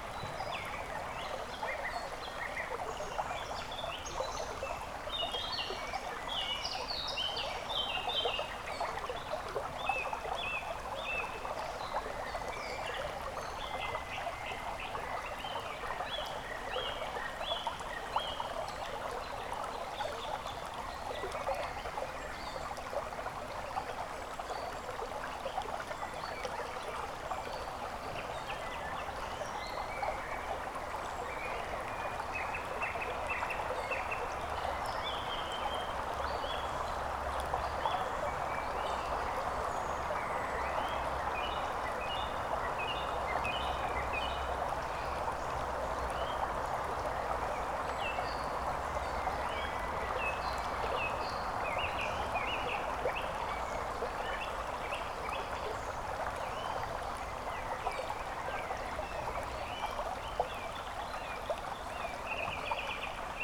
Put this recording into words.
alluvial forest(softwood) with small stream and forest birds singing. Zoom H1 (XY stereo) in DIY blimp type fluffy windshield.